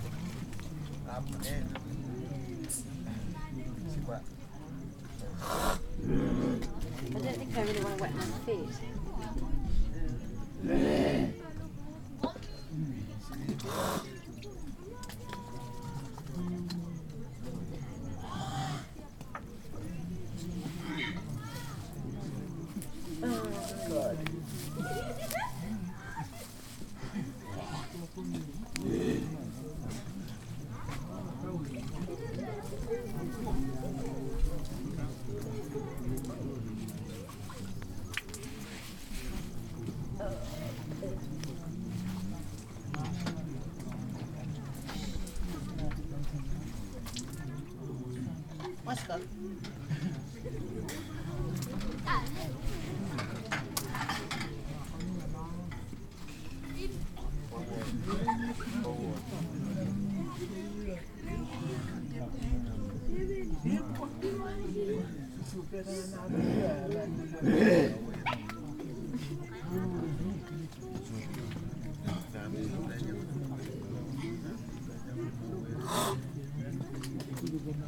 Malampa Province, Vanuatu - Kava bar in the evening
Men sitting and drinking Kava, chatting and spitting as they do every evening
August 2011